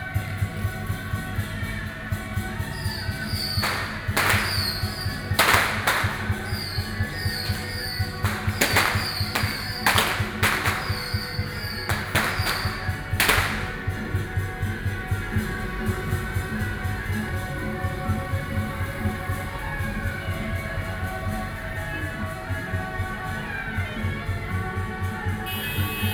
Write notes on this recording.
Firework, Traditional temple festivals, Binaural recordings, Sony PCM D50 + Soundman OKM II, ( Sound and Taiwan - Taiwan SoundMap project / SoundMap20121115-3 )